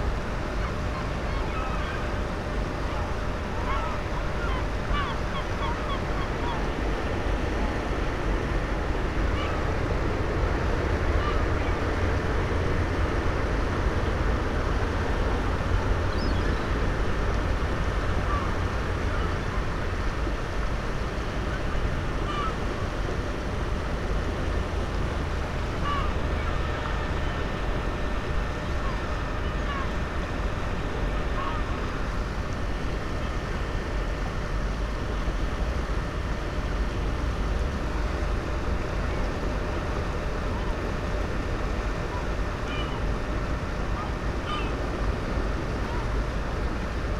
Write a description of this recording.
water flux, river gulls, dam, distant traffic